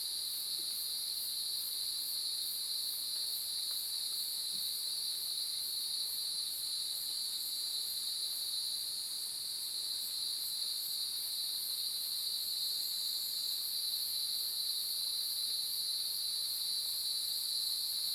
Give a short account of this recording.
Cicada sounds, Bird sounds, Zoom H2n Spatial audio